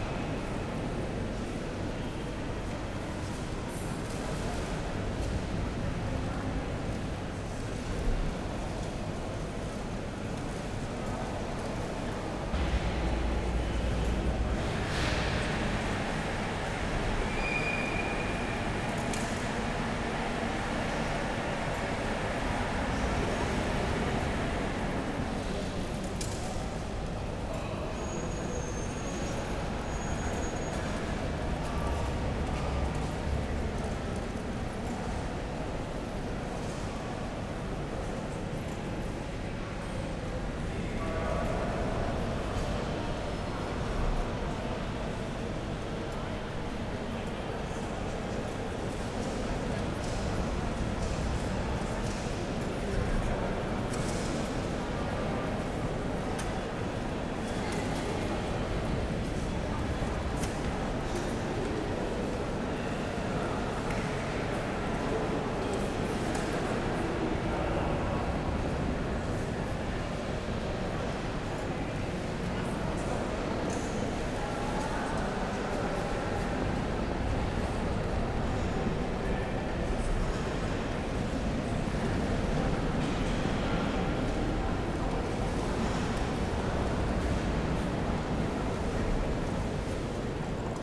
Hbf Bremen - main station, hall ambience
Bremen main stattion, great hall ambience on a Sunday evening
(Sony PCM D50, DPA4060)
Bremen, Germany, September 2014